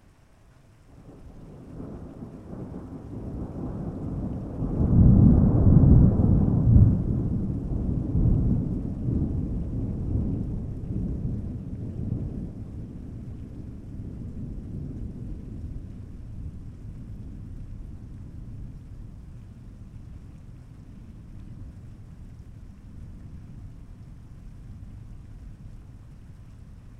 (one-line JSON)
{"title": "Chem. la Tessonnière den Bas, La Motte-Servolex, France - ORAGE LA TESSONNIERE STORM THUNDER", "date": "2021-08-12 18:04:00", "description": "Thunder and rain on late afternoon in la Tessonnière d'en bas, in la Motte Servolex. The town where I grew up.", "latitude": "45.59", "longitude": "5.87", "altitude": "290", "timezone": "Europe/Paris"}